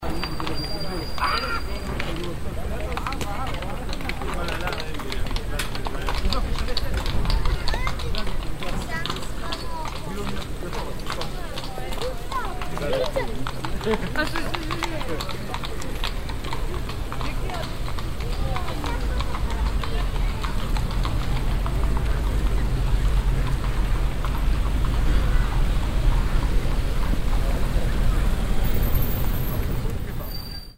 vienna, Stephansplatz, Fiaker - wienna, stephansplatz, fiaker
wien, stephansplatz, recorded in summer 2007, stereo nearfield recording
international city scapes - social ambiences and topographic field recordings
stephansplatz, an der stephanskirche, 20 May 2008